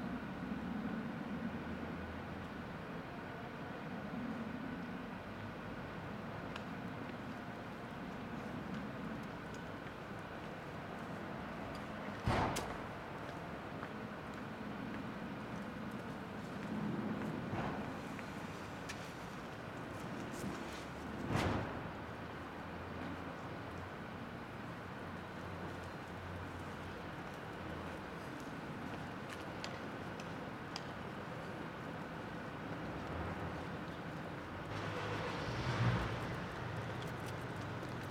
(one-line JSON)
{"title": "Av. des Sept Bonniers, Forest, Belgique - Ambulance and street ambience", "date": "2009-01-04 12:30:00", "description": "Recording from 2009 (PCM-D50), siren sound was saturated, I recently decliiped it with Izotope RX9 declip module and it's quite ok.", "latitude": "50.81", "longitude": "4.33", "altitude": "93", "timezone": "Europe/Brussels"}